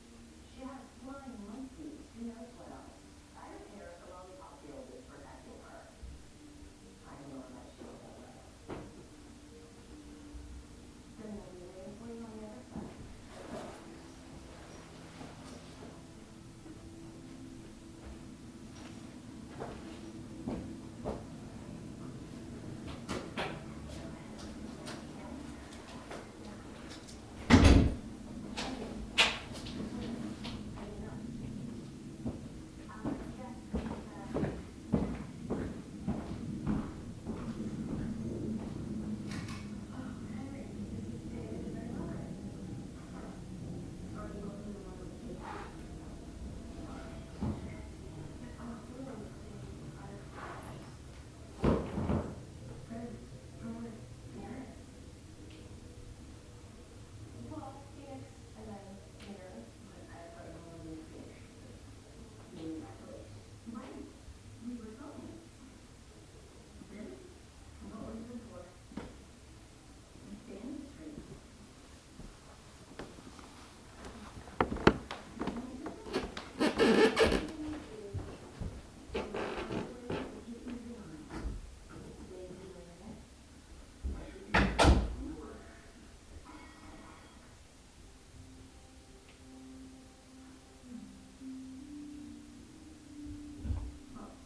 Washington Township, NJ, USA - 2 Jamie Drive
This is a recording of a busy night at a regular family household.